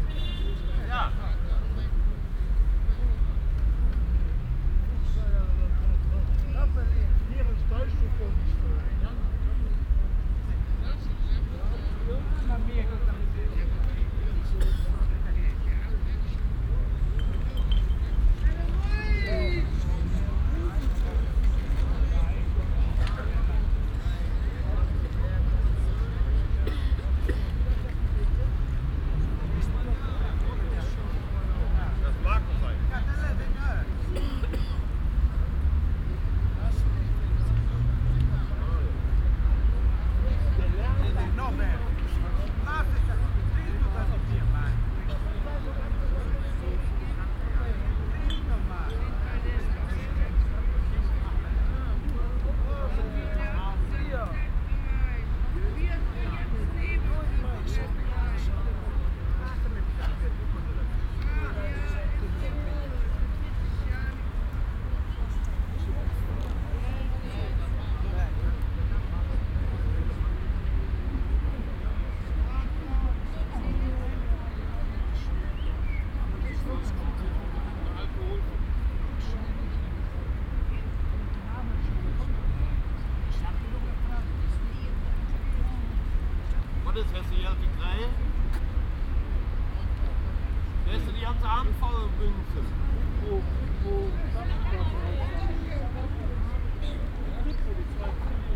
cologne, ebertplatz, platzbewohner
parkbankgespräche am nachmittag
soundmap: koeln/nrw
project: social ambiences/ listen to the people - in & outdoor nearfield recordings
nordstadt, ebertplatz